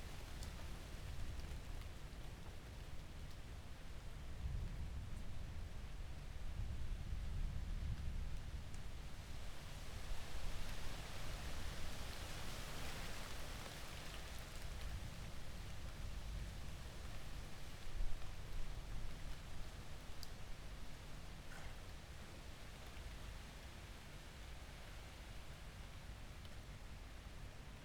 Loughborough Junction, London, UK - summer storm london 2.30 AM
lying listening to a summer storm at half past 2 in the morning on World Listening Day 2014
Roland R-09HR, electret stereo omnis out an upstairs window onto back gardens in S London
18 July 2014, ~03:00